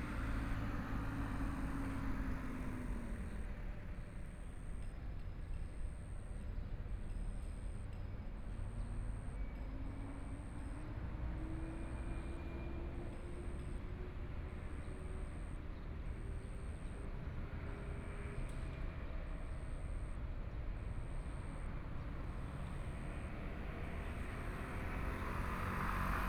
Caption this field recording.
The square outside the station area, The town's environmental sounds, Train traveling through, Binaural recordings, Zoom H4n+ Soundman OKM II